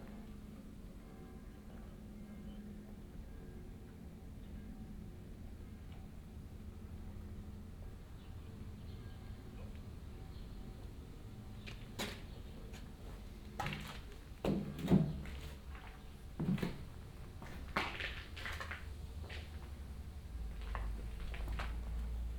former palm oil factory and storage, closed since decades, now beeing rebuild as luxus lofts, construction set on ground floor, lots of debris, binaural exploration.